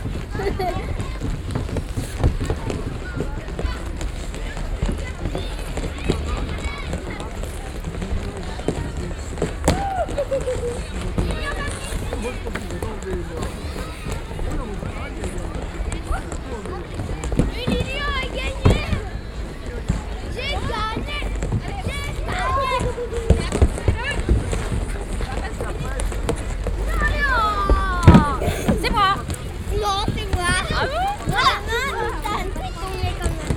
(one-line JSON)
{"title": "Yzeure, Place Jules Ferry, Ca glisse !", "date": "2010-12-30 16:58:00", "description": "France, Auvergne, Yzeure, skating rink, Binaural recording", "latitude": "46.57", "longitude": "3.35", "altitude": "237", "timezone": "Europe/Paris"}